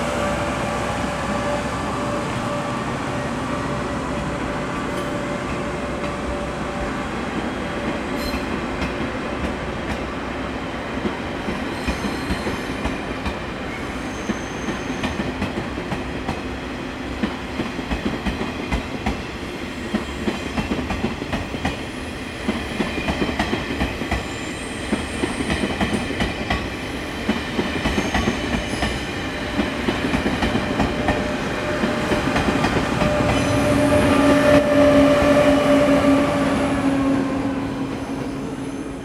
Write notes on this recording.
Beside the railway, Train traveling through, Sony Hi-MD MZ-RH1, Rode NT4